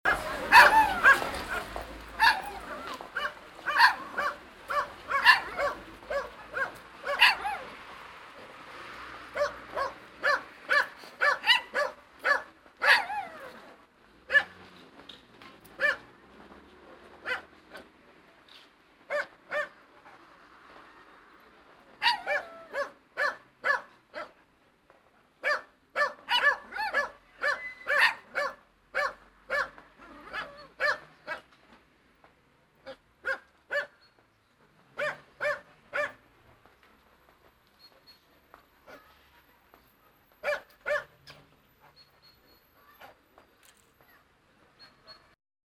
monheim, turmstrasse, 2 hunde im auto
mittags am parkplatz, zwei hunde in einem auto
soundmap nrw: social ambiences/ listen to the people - in & outdoor nearfield recordings